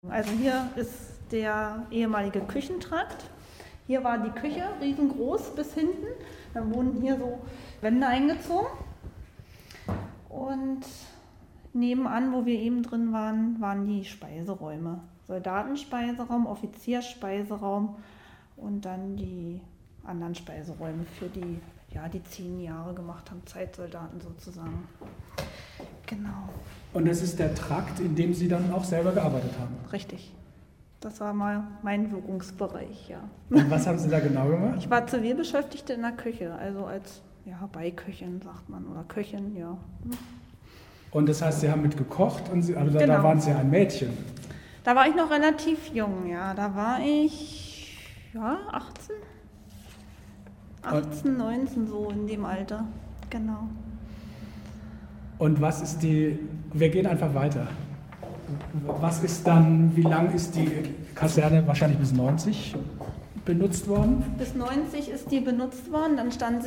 ziemendorf - im pferdeparadies
Produktion: Deutschlandradio Kultur/Norddeutscher Rundfunk 2009
8 August 2009, 21:06